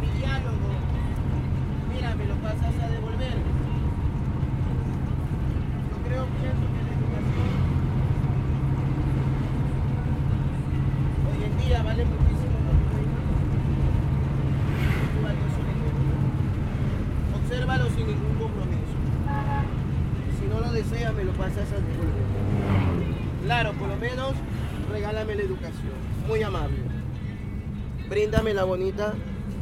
Guayaquil, Équateur - in the bus
Guayaquil, Ecuador, 17 December 2014